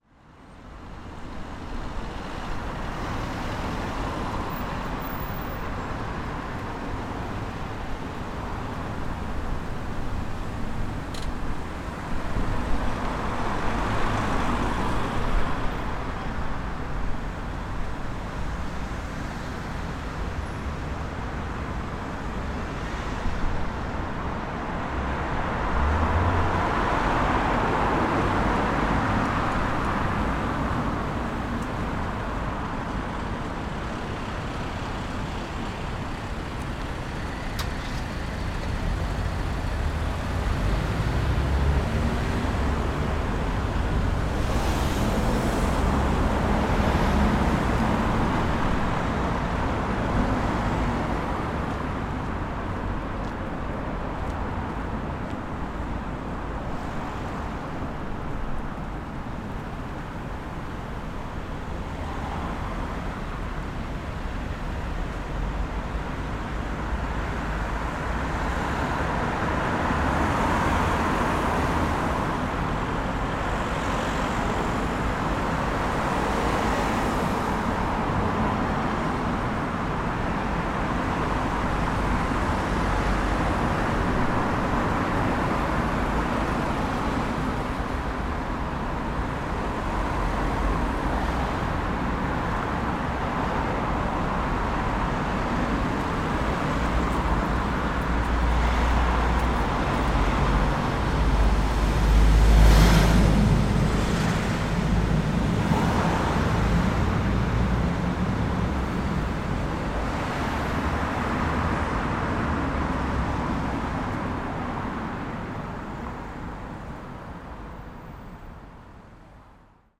Shaftesbury Square
I learned that they call this junction the “Golden Mile” due to the amount of the surrounding working-class areas, connection to main streets, and the percentage of restaurants or pubs connected to it. Perhaps this is why it felt uneasy when I began the recordings in March and there was no one around because everyone was told to be home and this area would usually have a larger number of the population surrounding or walking about. Returning to this spot after all these months felt like almost nothing had happened, that we just skipped 6-months of lockdown and everyone returned to what they were doing before that. Traffic, people, restaurants, pubs, they were all there and functioning again. I could not tell in this particular moment that anything had happened to Belfast.
Northern Ireland, United Kingdom, August 2020